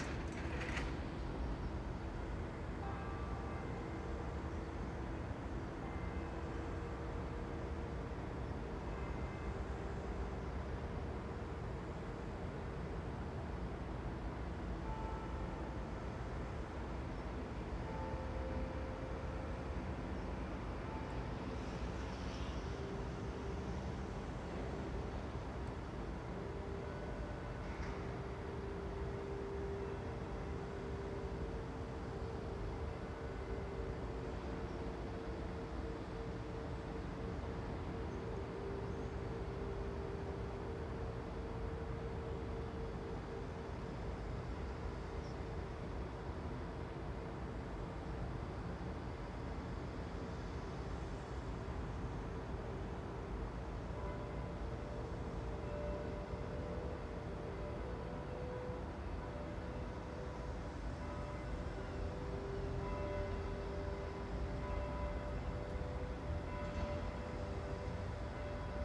La Rochelle, France - Sirène sèves
1er mercredi du mois
Couple ORTF DPA 4022 +Rycotte windjammer + SONOSAX + R4 PRO